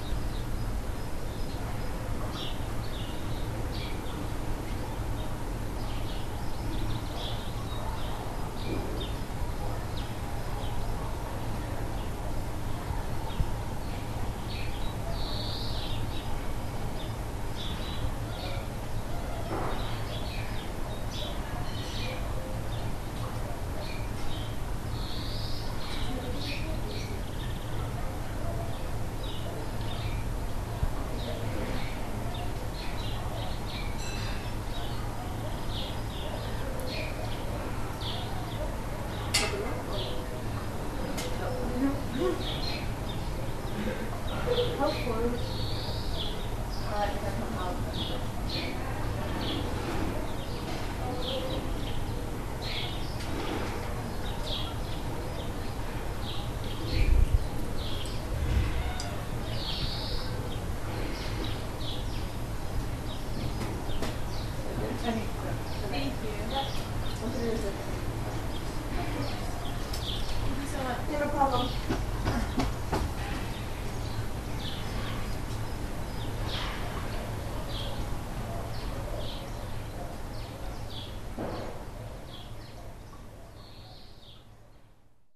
Moussac, France - Moussac backyard
The group gets ready before our day ride